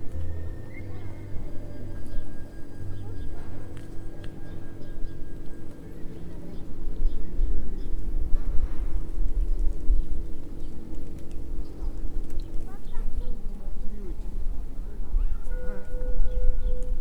Gandantegchinlin monastery - courtyard - music from the monastery - pigeons - people walking by
8 November 2014, 13:00